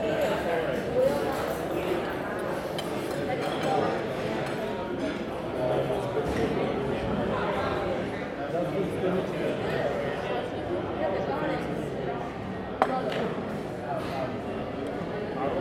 Politických vězňů, Nové Město, Česko - Ambience in Kantýna restaurant
Busy afternoon in Kantýna restaurant/cantine. People eating, chatting. Dishes and cutlery.
Zoom H2n, 2CH, on table.
Hlavní město Praha, Praha, Česká republika, 5 July 2019